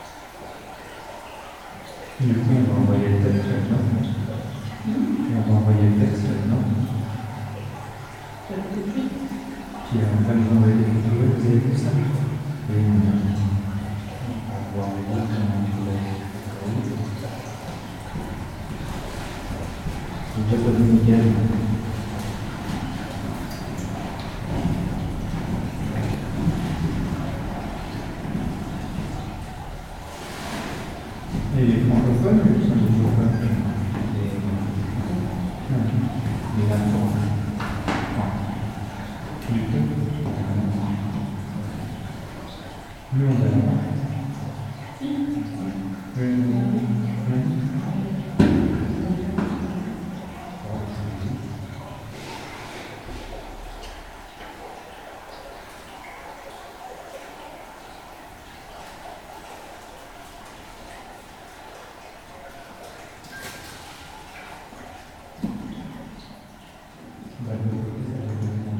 {"title": "Moyeuvre-Grande, France - Into the mine", "date": "2016-10-29 11:50:00", "description": "Discussing about the way we will take into the underground mine. There's so much reverb that it's difficult to understand one word of what we say.", "latitude": "49.28", "longitude": "6.06", "altitude": "342", "timezone": "Europe/Paris"}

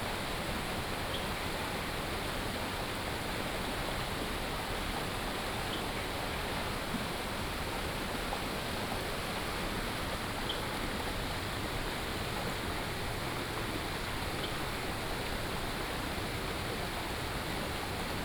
{"title": "Wayaozi River, Tamsui Dist., New Taipei City - Standing on the bridge", "date": "2016-04-16 08:05:00", "description": "Stream and Bird sounds, Standing on the bridge", "latitude": "25.23", "longitude": "121.45", "altitude": "25", "timezone": "Asia/Taipei"}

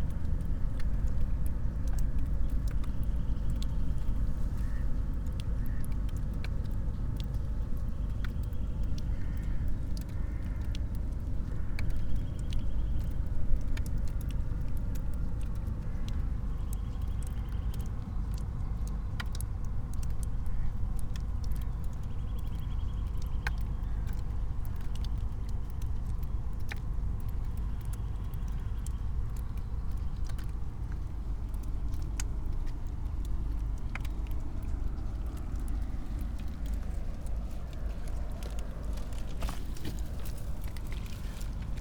{"title": "cliff, river Drava, near power plant - dripping cliff garden", "date": "2015-03-08 13:21:00", "description": "spring waters, drops, trickling", "latitude": "46.57", "longitude": "15.61", "altitude": "263", "timezone": "Europe/Ljubljana"}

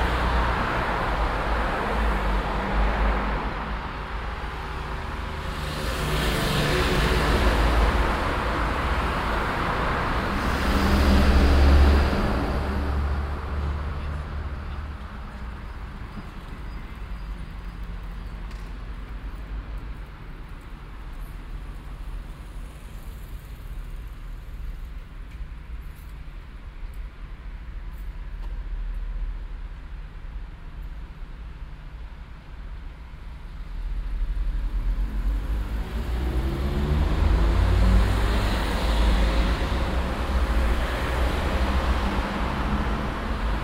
{"title": "cologne, south rheinuferstraße, traffic - cologne, sued, rheinuferstraße, verkehr", "date": "2008-05-21 16:03:00", "description": "morgendlicher verkehr an der rheinuferstrasse - hier unterbrochen durch ampel\nsoundmap: cologne/ nrw\nproject: social ambiences/ listen to the people - in & outdoor nearfield recordings", "latitude": "50.92", "longitude": "6.97", "altitude": "49", "timezone": "Europe/Berlin"}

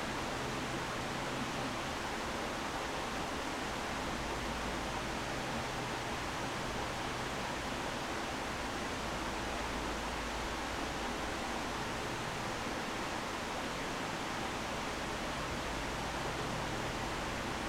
{"title": "Rte Forestière Domaniale de la Combe d'Iré, Chevaline, France - Dans la cabane", "date": "2022-08-16 17:15:00", "description": "A l'intérieur de la cabane du Festival des cabanes.", "latitude": "45.76", "longitude": "6.22", "altitude": "566", "timezone": "Europe/Paris"}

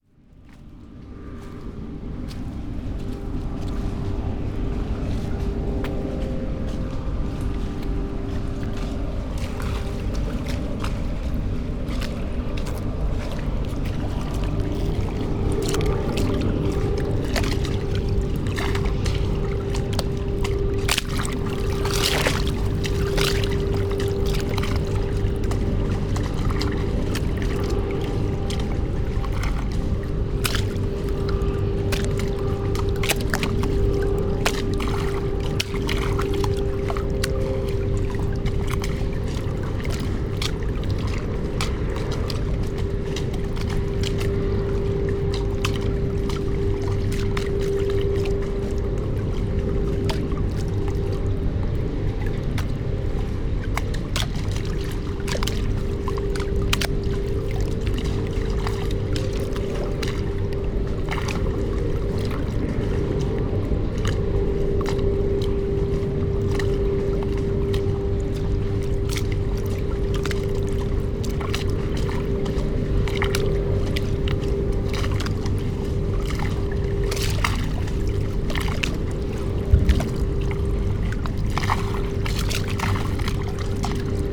{"title": "Plänterwald, Berlin, Germany - river Spree, lapping waves, concrete wall", "date": "2015-09-06 17:06:00", "description": "few steps away, river water and concrete wall, cement factory, wind\nSonopoetic paths Berlin", "latitude": "52.49", "longitude": "13.49", "altitude": "33", "timezone": "Europe/Berlin"}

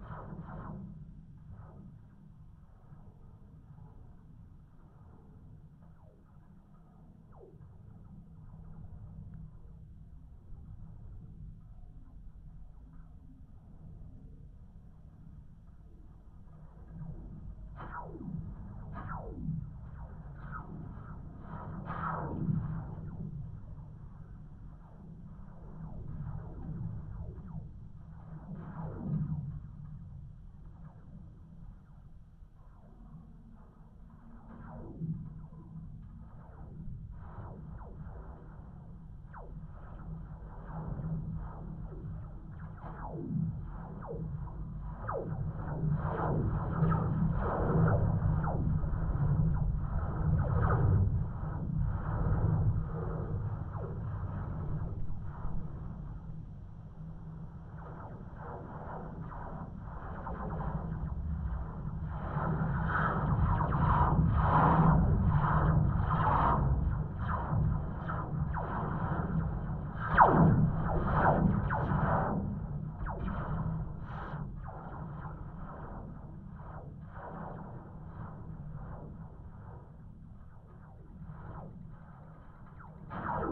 {"title": "Kulionys, Lithuania, abandoned funicular", "date": "2017-08-07 17:10:00", "description": "contact microphone on an abandoned funicular used for winter sports", "latitude": "55.32", "longitude": "25.56", "altitude": "185", "timezone": "Europe/Vilnius"}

